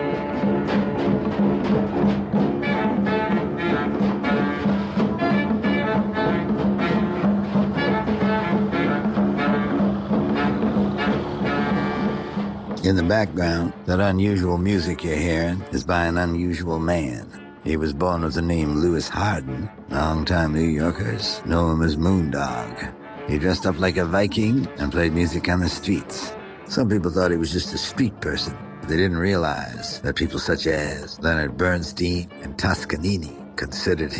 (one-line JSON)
{"title": "6th Avenue/54th Street - Moondog - Bob Dylan", "latitude": "40.76", "longitude": "-73.98", "altitude": "19", "timezone": "GMT+1"}